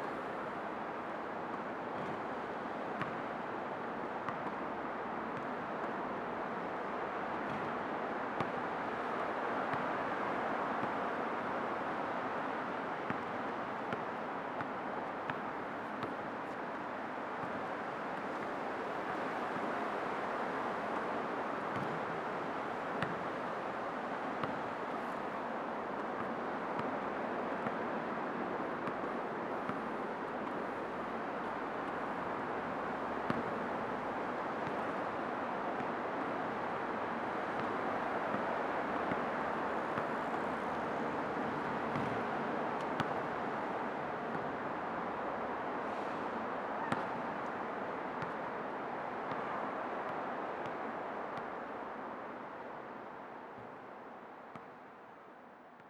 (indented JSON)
{
  "title": "대한민국 서울특별시 서초구 우면동 - Yangjaecheon Basketball Court",
  "date": "2019-10-04 20:02:00",
  "description": "A person practising basketball alone at the Basketball court, nearby Yangjaechon.\nStream flowing, basketball bouncing, nice reflection.\n양재천 주변 농구장에서 농구공을 연습하는 사람의 소리.",
  "latitude": "37.46",
  "longitude": "127.03",
  "altitude": "25",
  "timezone": "Asia/Seoul"
}